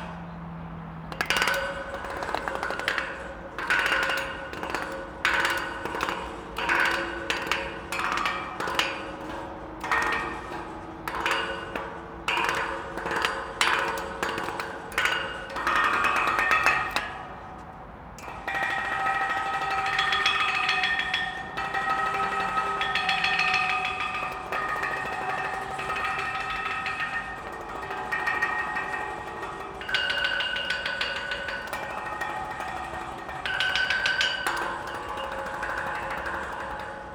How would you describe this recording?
The metal railings seperating the railtrack from the footpath are quite musical. There are different pitches and timbres. These are being played with two wooden sticks found nearby. The traffic from the autobahn beside the river below is the backdrop.